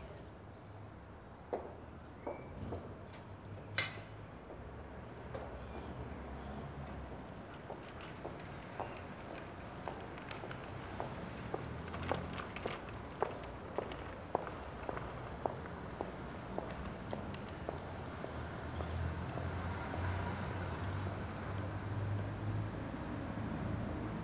France, Ille, Emetteur de cris / Bird signal blaster - Emetteur de cris / Bird signal blaster
At the post office square, scaring bird calls blast from a loudspeaker, wanting to repel inviding starlings.
Ille-sur-Têt, France, 24 February 2010, 17:58